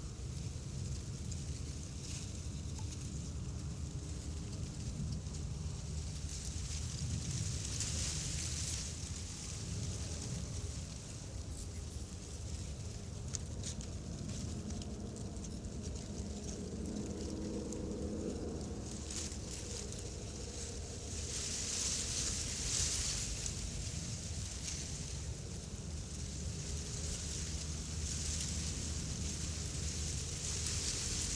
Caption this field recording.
dried grass at the shore of the river is the place where I hide my mics...wind comes through the grass...